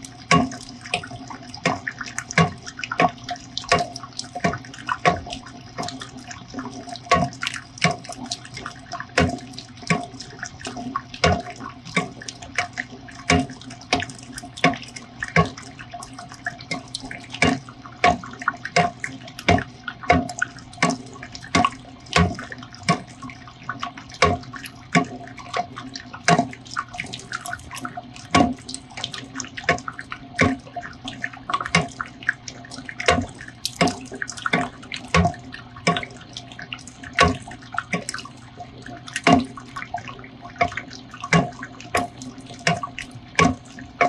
This audio is of a large mixer being used in a bakery. This is the sound of butter and oil being mixed together.
Georgia, United States of America